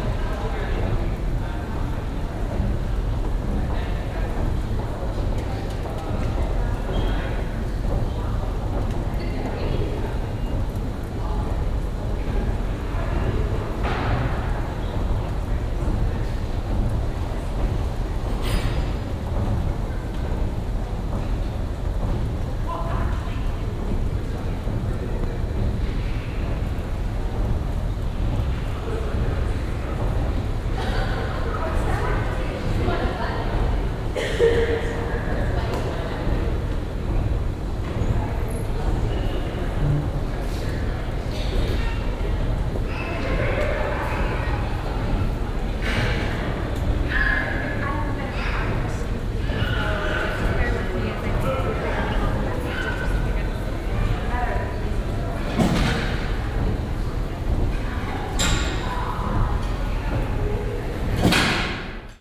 vancouver, west hastings, simon fraser university, moving stair
in the simon fraser university, first floor, the steady sound of the moving stairs, some people coming upwards
soundmap international
social ambiences/ listen to the people - in & outdoor nearfield recordings